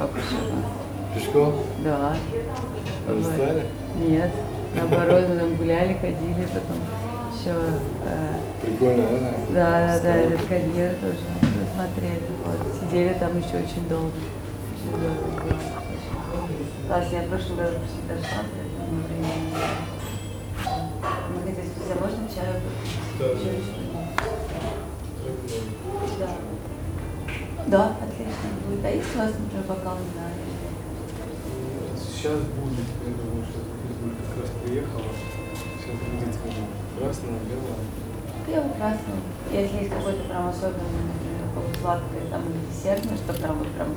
11am an 11pm: same place (at svetlana's favourite Tatar hotel), glued together. All plants, animals, weather, honking cars, hotel-guests and radio-programs communicate with one-another. Does the zoom recorder reveal that?
17 July 2015, 11:00